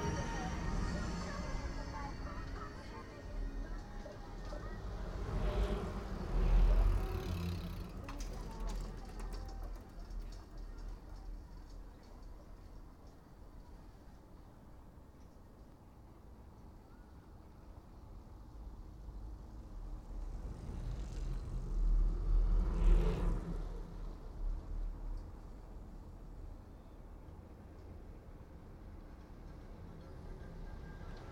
In the summer the cycle road surrounding Chuncheon is very well used. Some stretches of the course are elevated wooden paths that run over the water around a series of low cliffs.
Chuncheon, Gangwon-do, South Korea, 19 July 2015, 17:00